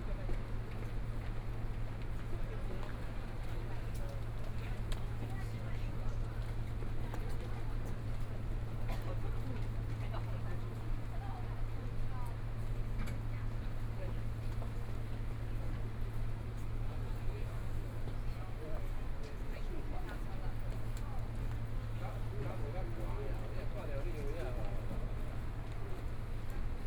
In the station platform, Zoom H4n + Soundman OKM II
台北市 (Taipei City), 中華民國, 15 January 2014, 08:41